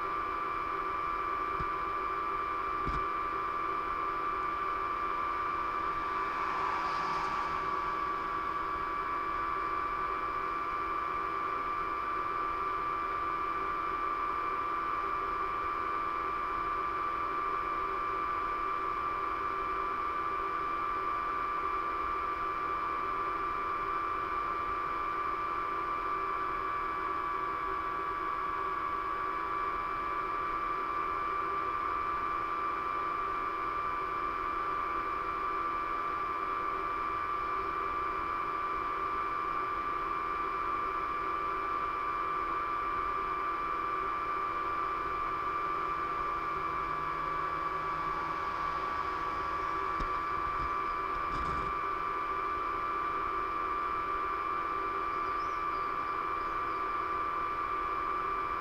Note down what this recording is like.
the defibrillator in the telephone kiosk ... pair of j r french contact mics to olympus ls 14 ...